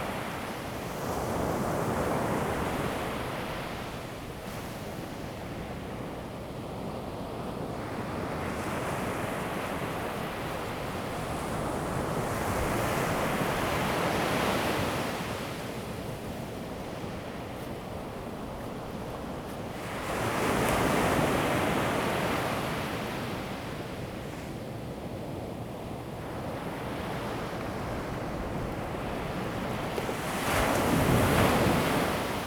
Chenggong Township, Taiwan - Sound of the waves
Sound of the waves, In the beach, Very hot weather
Zoom H2n MS+ XY